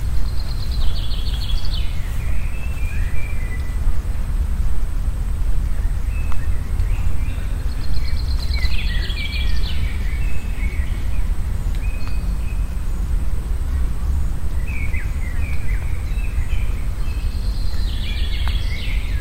aufnahme september 07 mittags
project: klang raum garten/ sound in public spaces - in & outdoor nearfield recordings
22 April 2008, ~14:00